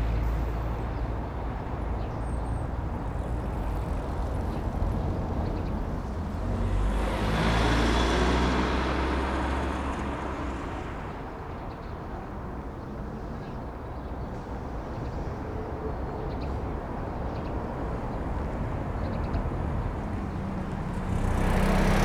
Berlin: Vermessungspunkt Friedelstraße / Maybachufer - Klangvermessung Kreuzkölln ::: 23.01.2012 ::: 11:09
23 January, ~11:00